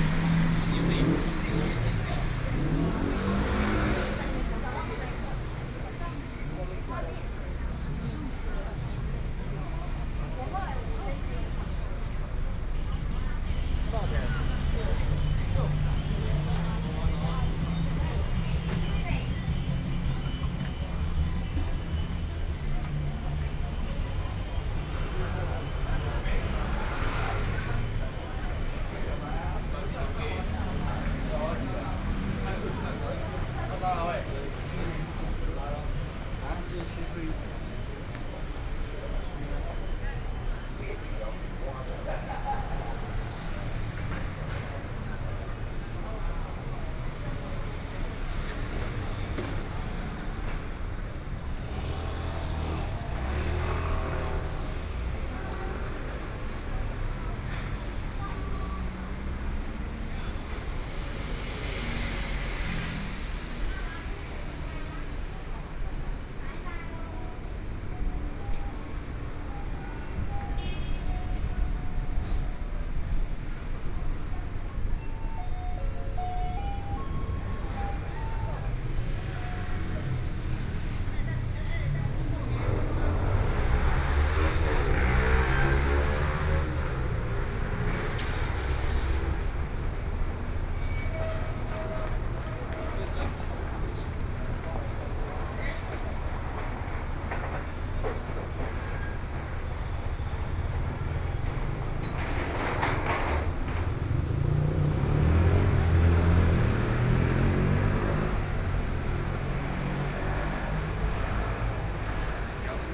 walking on the Road, Various shops voices, Traffic Sound
Please turn up the volume a little. Binaural recordings, Sony PCM D100+ Soundman OKM II
Taipei City, Taiwan, 15 April 2014